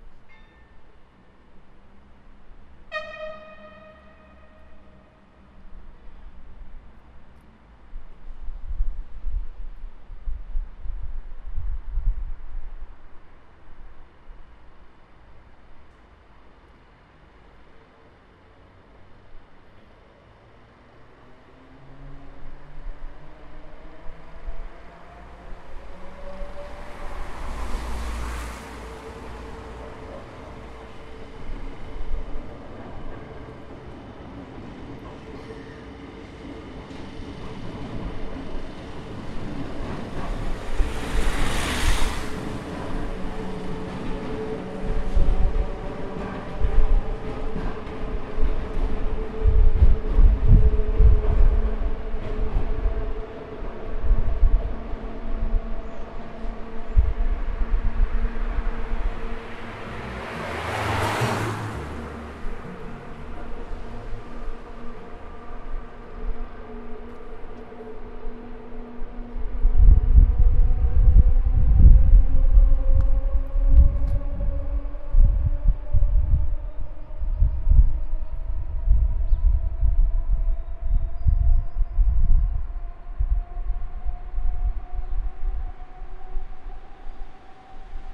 {"title": "bilbao, atxuri train station", "description": "a train leaving the Atxuri station and cars passing by my side", "latitude": "43.25", "longitude": "-2.92", "altitude": "18", "timezone": "Europe/Berlin"}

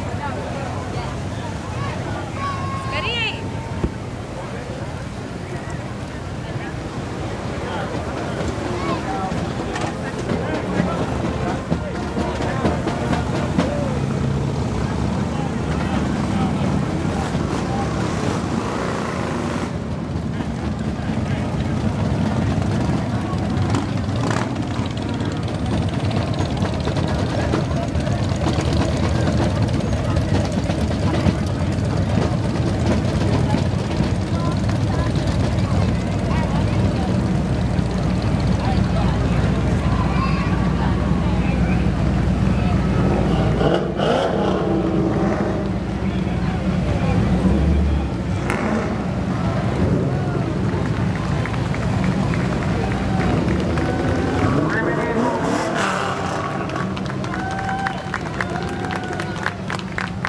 {"title": "Memorial Day 2011 in front of the National Archives", "date": "2011-05-30 15:36:00", "description": "Memorial Day parade, Washington DC, National Archives, muscle cars, soldiers, drums, bands, kids, water hydrant, police sirens", "latitude": "38.89", "longitude": "-77.02", "altitude": "2", "timezone": "America/New_York"}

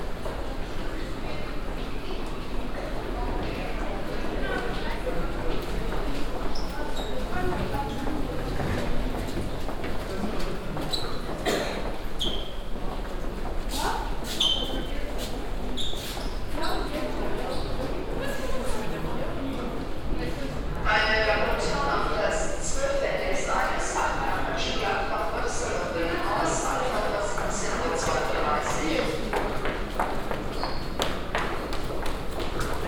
{"title": "Düsseldorf, HBF, Gleis Nebenzugang - düsseldorf, hbf, gleis nebenzugang", "date": "2009-01-24 16:07:00", "description": "At the main station.\nsoundmap nrw: social ambiences/ listen to the people - in & outdoor nearfield recordings", "latitude": "51.22", "longitude": "6.79", "altitude": "49", "timezone": "Europe/Berlin"}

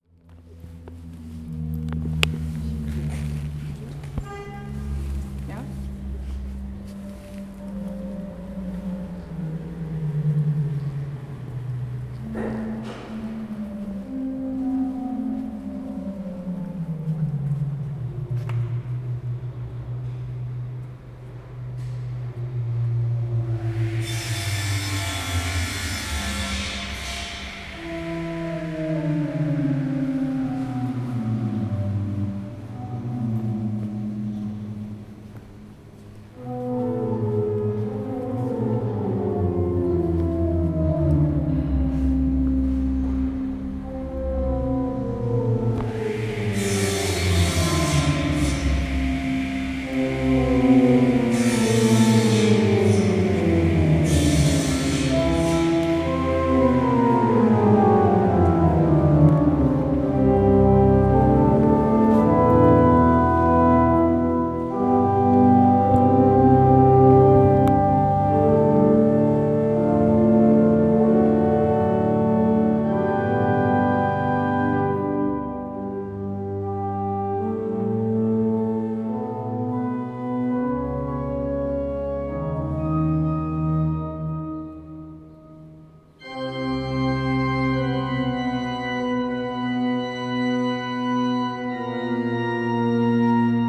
{"title": "Via Roma, Adrano CT, Italy - Organ rehearsing in the church of Santa Lucia", "date": "2019-03-21 23:18:00", "description": "somebody checking the organ in the church before the celebration, while others are mending something on the doors", "latitude": "37.66", "longitude": "14.83", "altitude": "555", "timezone": "Europe/Rome"}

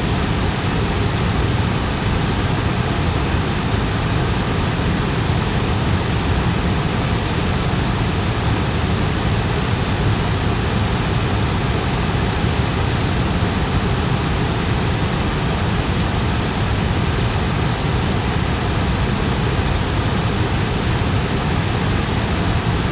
{"title": "Inselstraße, Hameln, Germany - City Waterfall in Hameln", "date": "2021-04-28 14:39:00", "description": "A Waterfall in the Center of Hameln City.", "latitude": "52.10", "longitude": "9.35", "altitude": "66", "timezone": "Europe/Berlin"}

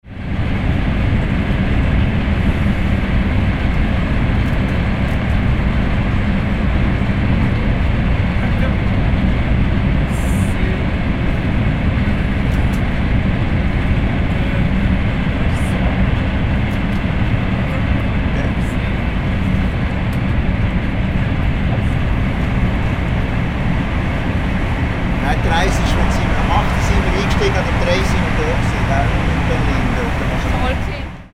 {"title": "Neuer Tunnel für Bern ins Wallis", "date": "2011-07-09 16:38:00", "description": "unter den Alpen hindurch vom Rhonetal im Wallis nach Bern", "latitude": "46.31", "longitude": "7.83", "altitude": "661", "timezone": "Europe/Zurich"}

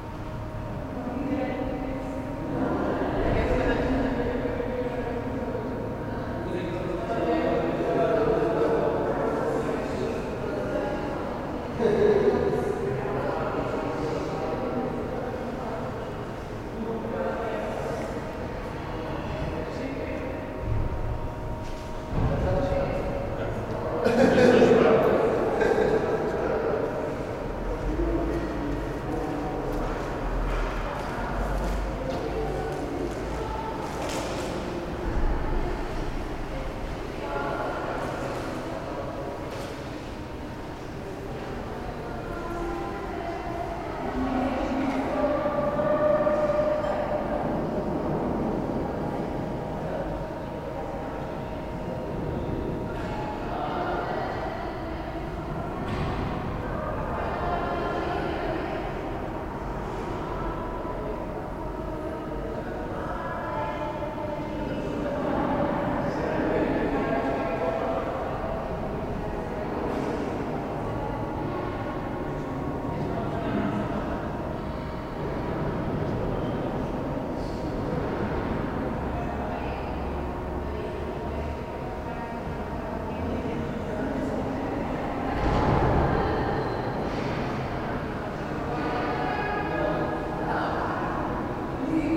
{"title": "Nossa Senhora do Pópulo, Portugal - Varanda Interior", "date": "2014-03-04 19:52:00", "description": "Balcony inside main entrance. Recorded with Sony PCM-D50", "latitude": "39.40", "longitude": "-9.14", "timezone": "Europe/Lisbon"}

